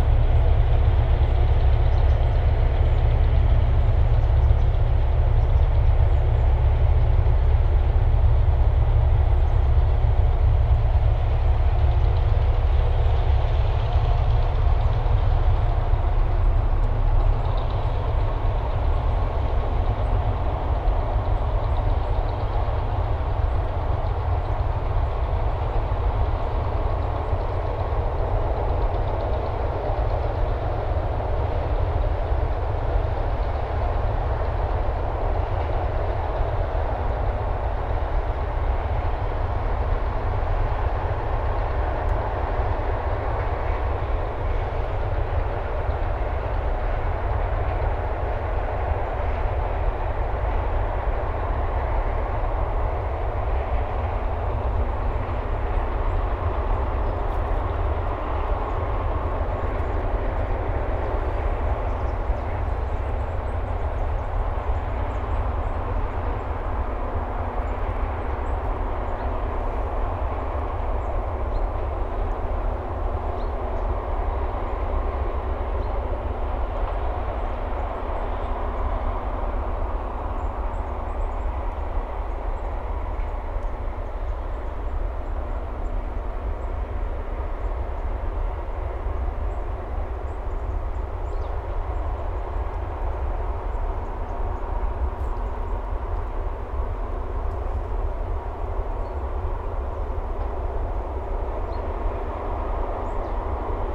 Vyžuonos, Lithuania, woodcutters tractors
heavy woodcutters machinery...sounds like alien beast in the forest
Utenos apskritis, Lietuva, 11 October 2021, ~18:00